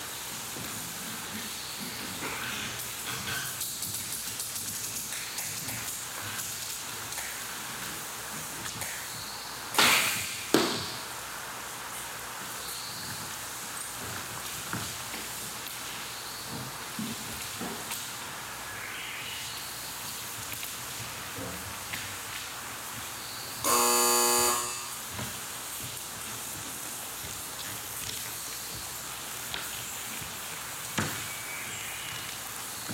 Matobamachi, Yahatanishi Ward, Kitakyushu, Fukuoka, Japan - Kyūdō Practice
Sony WM-D6C / Sony XII 46 / Roland CS-10EM
福岡県, 日本, 10 June 2020, 11:12